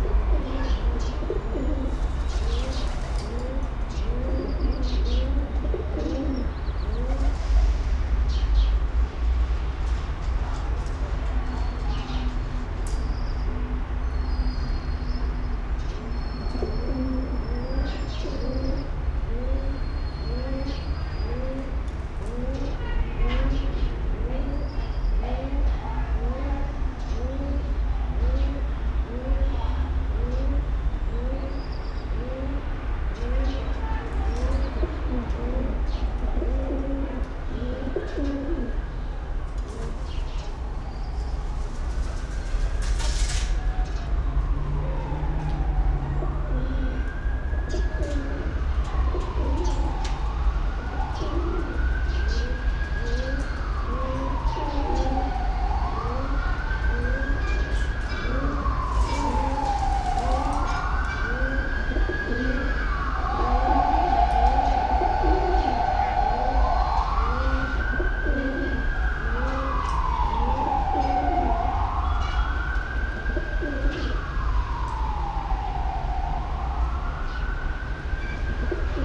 Cluj-Napoca, centre, backyard - Cluj-courtyard-ambient
Sound of the morning city center from the courtyard of Casa Municipala de Cultura